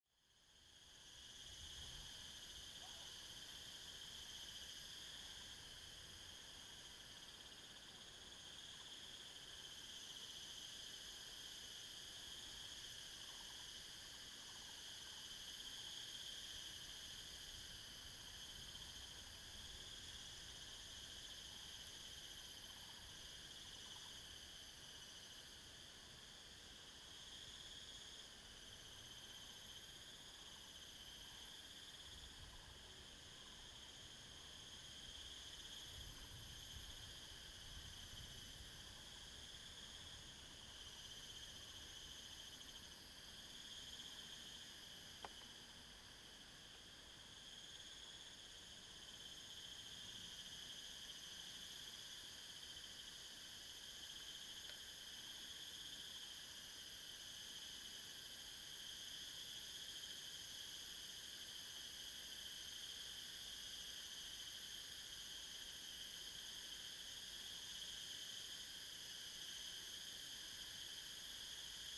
紹雅產業道路, Xinxing, Daren Township - Dangerous mountain road
Dangerous mountain road, Bird call, The sound of cicadas
Zoom H2n MS+XY
Taitung County, Taiwan, 13 April 2018